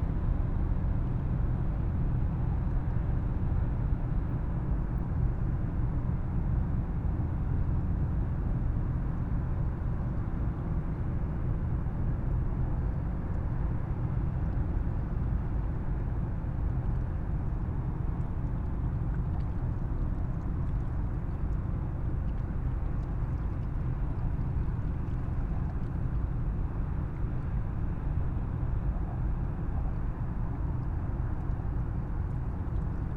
Rhein river banks, Riehl, Köln, Deutschland - ship drone
Köln, river Rhein, ships passing-by, drone of engines, waves
(Tascam iXJ2 / ifon, Primo EM172)
Nordrhein-Westfalen, Deutschland